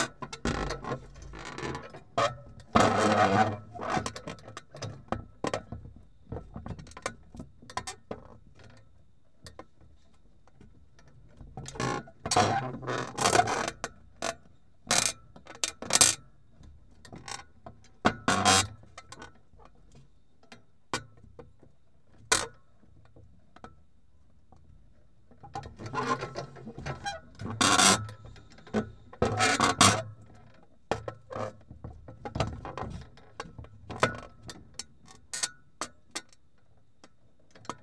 Prom. Charles Trenet, Narbonne, France - metalic vibration 07
metalic umbrella moves with the wind
Captation : ZOOM H4n / AKG C411PP